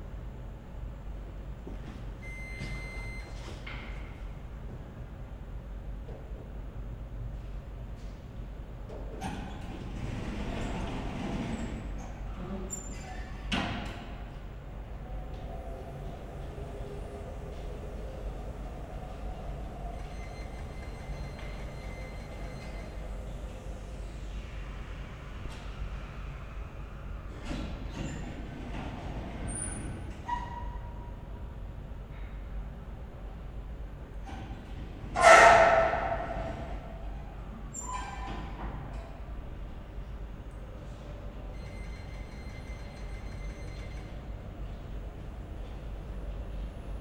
silent space within the empty congress centre space at tv tower, alexanderplatz berlin. sound of an elevator and hum of air conditioner, voices from outside.
Berlin, Germany, 21 May 2011, 21:10